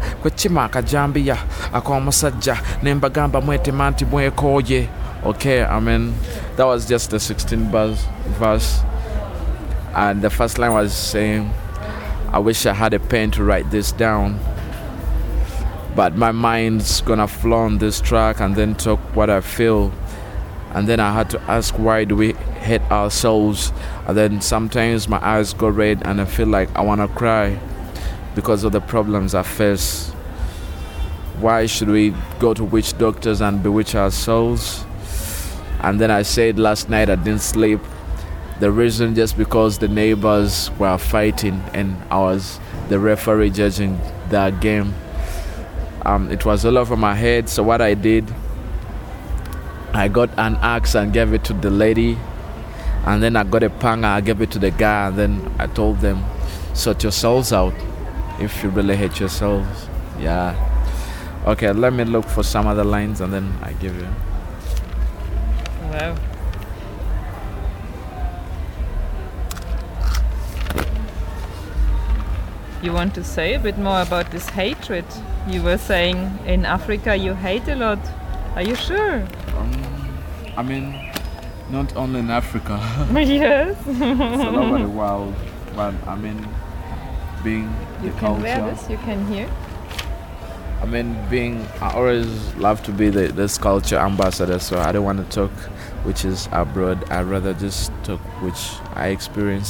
Uganda National Cultural Centre, Kampala, Uganda - Burney MC - Why Hate…?
…we are sitting with Burney in front of the Uganda National Cultural Centre. Some events are going on, music, and many voices in the air… Burney MC has his sketch-book of lyrics with him and recites some of his verse to me … like this one from last night…
As an artist, Burney grew up in the Bavubuka All Stars Foundation and belongs to a group of artists called Abatuuze.